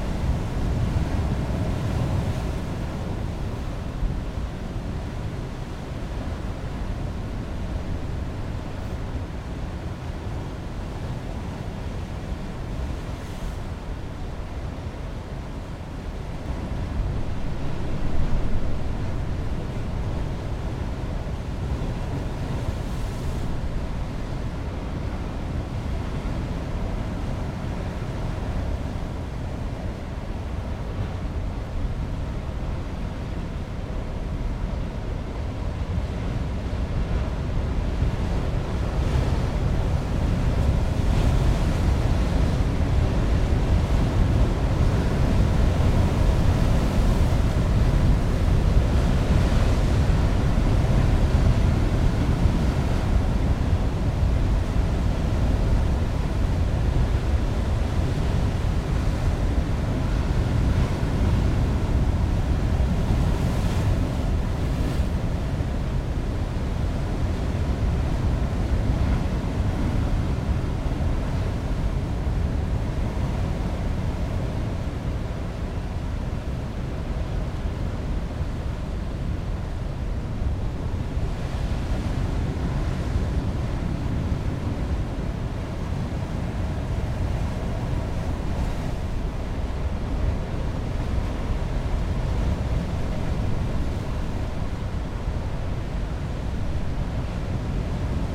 Chemin du Phare, Perros-Guirec, France - Heavy waves and Sea - distant rumble [Ploumanach]
à l'abri du vent entre 2 rochers. Le grondement des vagues au loin.
sheltered from the wind between 2 rocks. The roar of the waves in the distance.
April 2019.
Bretagne, France métropolitaine, France